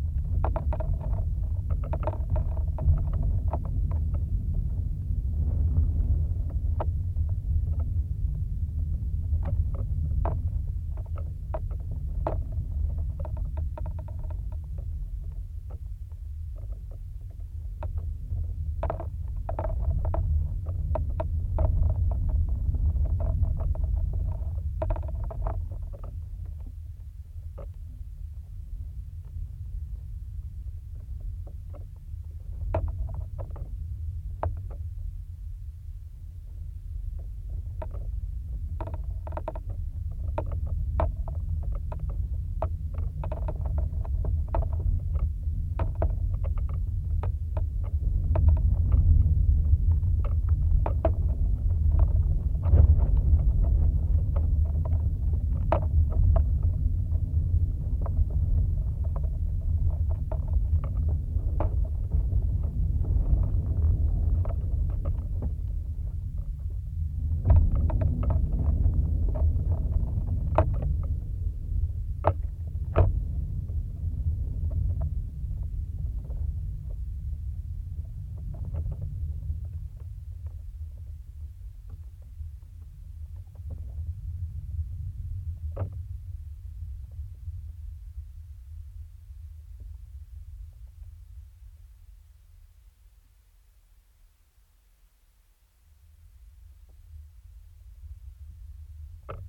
Bluff View Trail Access, Glencoe, Missouri, USA - Bluff Safety Fence
There is access no more to the Bluff View Trail at this point heading west. An orange safety fence blocks the trail. Recording of contact mic attached to plastic fence and geophone attached to rebar fence post.
Missouri, United States